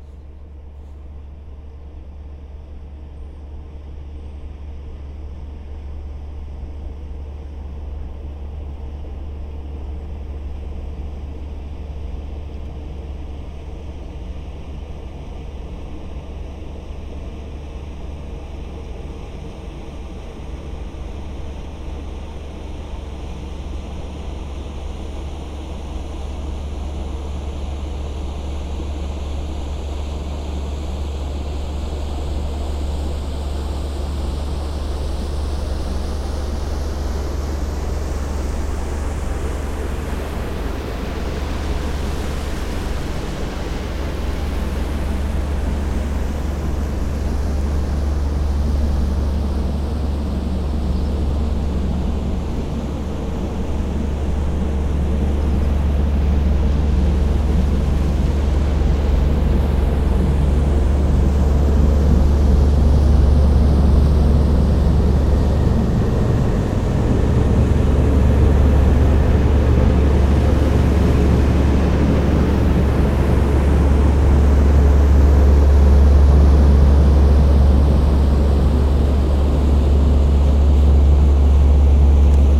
Saint-Pierre-d'Autils, France - Boat
A boat is passing by on the Seine river, going to Rouen. It's the Orca boat, a double boat transporting sand.
22 September